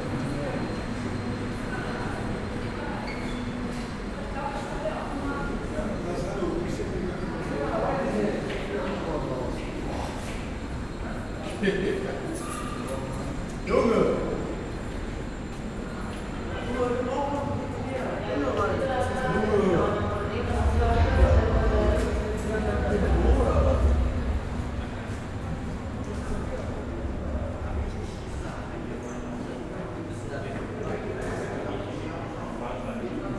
Oldenburg Hbf - main station walk
short walk through Oldenburg main station, starting at the main entrance hall
(Sony PCM D50, DPA4060)
14 September 2014, 13:55